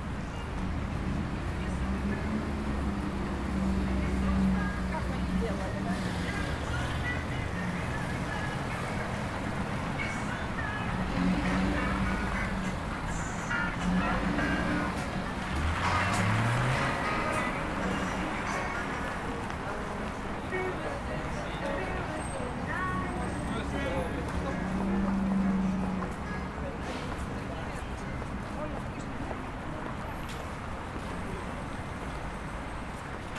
Ligovsky Ave, Sankt-Peterburg, Russia - Walking along Ligovksy Avenue
Walking along Ligovksy Avenue in the afternoon with a Zoom H4N Pro, recording traffic, passersby and shops holding horizontally pointing in front of me